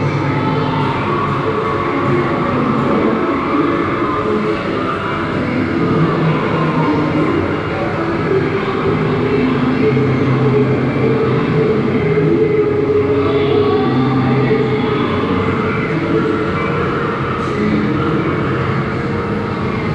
Oberkassel, Düsseldorf, Deutschland - Düsseldorf, Stoschek Collection, First Floor
Inside the Stoschek Collection on the first floor during the exhibition number six - flaming creatures. The sound of an media installation by John Bock in the wide fabric hall ambience.
This recording is part of the exhibition project - sonic states
soundmap nrw - social ambiences, sonic states and topographic field recordings